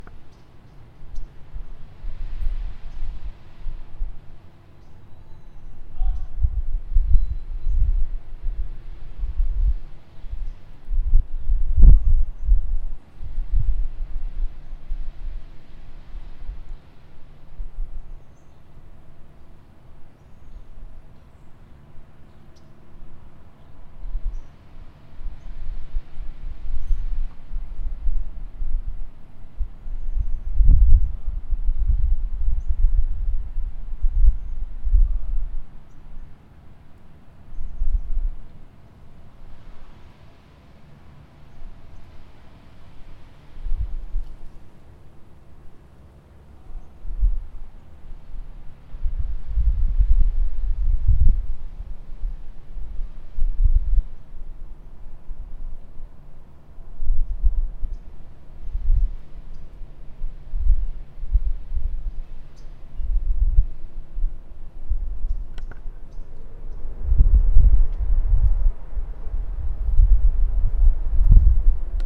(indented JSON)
{
  "title": "UCSB Student Health - Early Morning at UCSB Student Health Field",
  "date": "2019-10-19 09:15:00",
  "description": "This recording was taken in the early hours of a warm autumn Saturday. You can hear Santa Barbara awaken in the early morning.",
  "latitude": "34.41",
  "longitude": "-119.85",
  "altitude": "24",
  "timezone": "America/Los_Angeles"
}